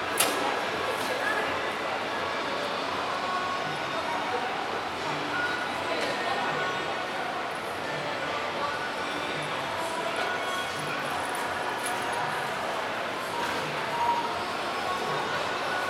L'Aquila, Centro comm. L'Aquilone - 2017-06-08 02-L'Aquilone
ripresa effettuata vicino ai registratori di casse del Conad